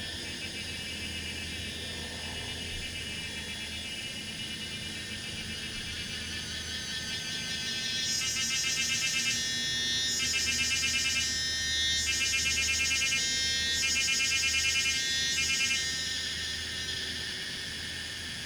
水上巷, 埔里鎮桃米里 Taiwan - Cicadas sound
Cicadas sound, Traffic Sound
Zoom H2n MS+XY
10 June 2015, 17:04